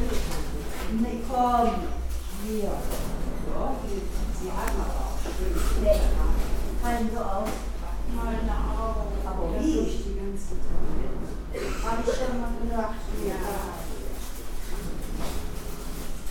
Sprockhövel, Deutschland - SlammingSupermarketTrolleys
Noises from the front room of a supermarket. Typical Slashing Sound. Recorded with Tascam DP-05
Wuppertal, Germany, January 2017